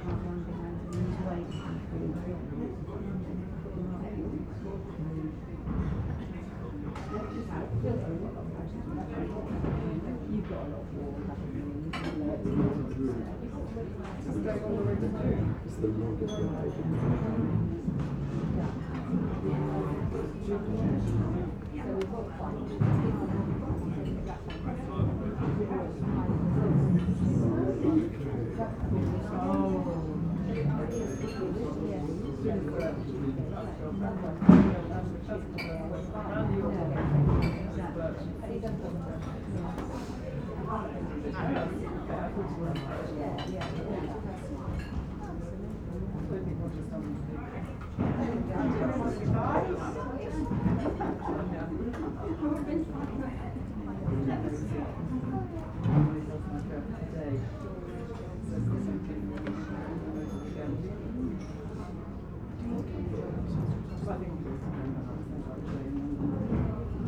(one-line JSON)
{"title": "Déjeuner Anglais, Snape, 6-4-22", "date": "2022-04-06 12:34:00", "description": "Typically polite english clientelle at lunch overlooking the River Alde and its reed beds. The mics are on the floor. Most of the people are on the left and the kitchen door is on the right.\nMixPre 6 II with 2 Sennheiser MKH 8020s", "latitude": "52.16", "longitude": "1.50", "altitude": "3", "timezone": "Europe/London"}